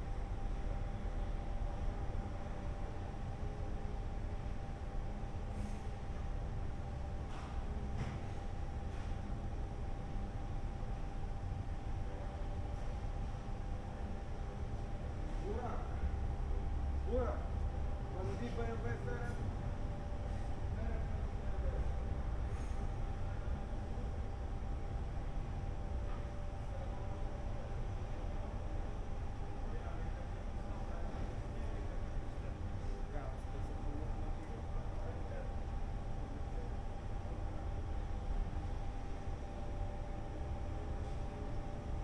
Binckhorst, Laak, The Netherlands - Sounds of the carrossery workshop
Sounds of the workshop (body repair for car). After having lunch, the mechanics are picking up their work again. From outside of the workshop the sounds of the machines and the workers chatting are blending together.
XY recording (AT-8022 into fostex FR2-LE).
Binckhorst Mapping Project.
24 May, ~13:00